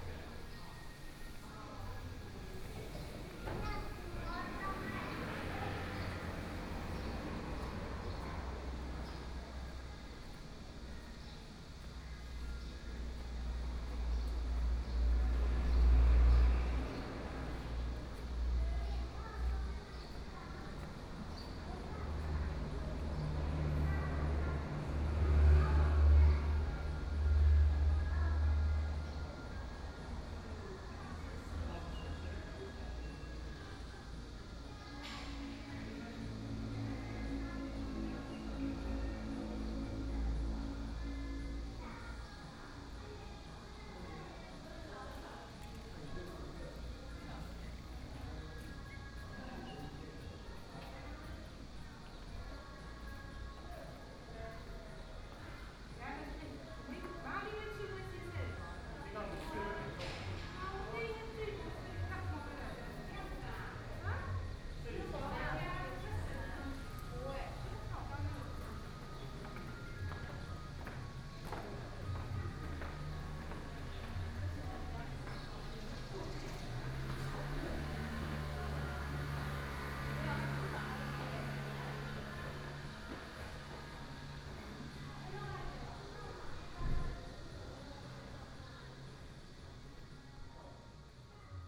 保安宮, 壯圍鄉過嶺村 - walking in the temple
In the temple, Traffic Sound, Birdsong sound, Small village
Sony PCM D50+ Soundman OKM II